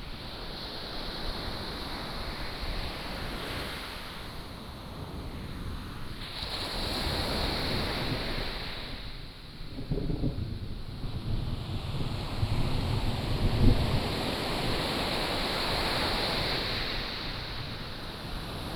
長濱村, Changbin Township - sound of the waves
sound of the waves, The sound of thunder